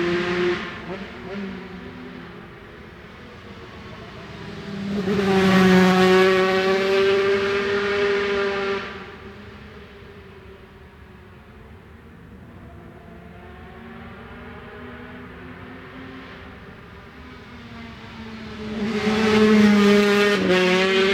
british superbikes 2002 ... 125 qualifying ... one point stereo to minidisk ...